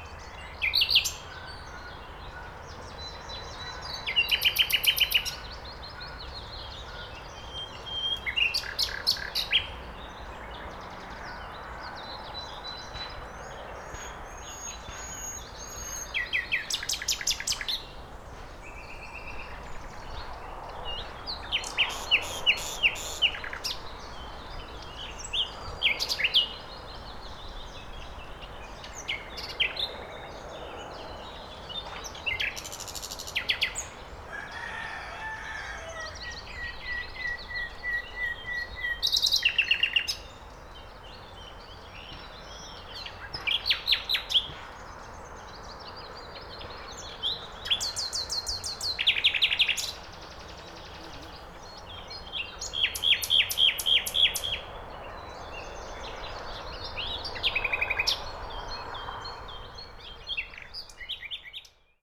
Suchy Las, field track - bird in action
a bird chirping away in a tree. i was able to hear it from a great distance and was determined to find it. since it didn't stop the call i tracked it within a few minutes.
Polska, European Union